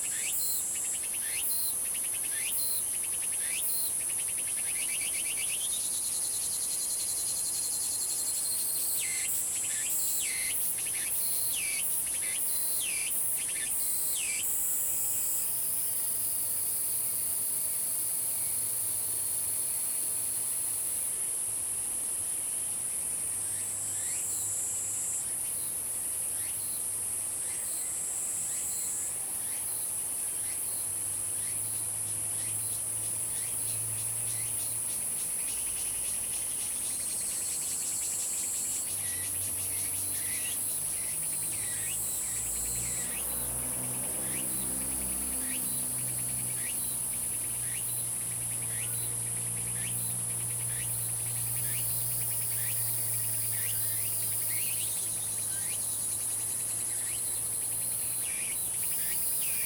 Woody House, 南投縣埔里鎮桃米里 - Birds singing
Insect sounds, Birds singing
Zoom H2n MS+XY